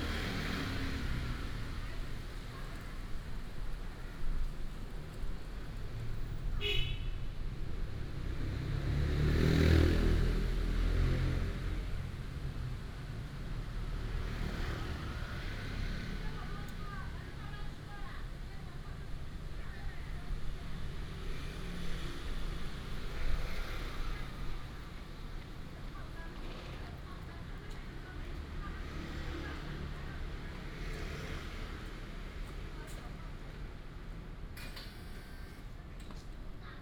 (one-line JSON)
{"title": "海光新村, East Dist., Hsinchu City - Walking in the old community alley", "date": "2017-10-06 18:11:00", "description": "Walking in the old community alley, traffic sound, Binaural recordings, Sony PCM D100+ Soundman OKM II", "latitude": "24.80", "longitude": "120.99", "altitude": "45", "timezone": "Asia/Taipei"}